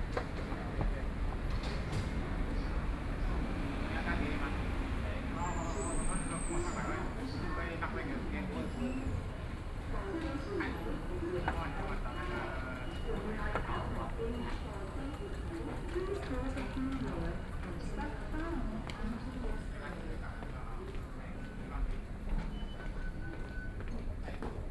Fuxing N. Rd., Taipei City - To MRT station
Walking in the To MRT station, Traffic Sound, Walking towards the South direction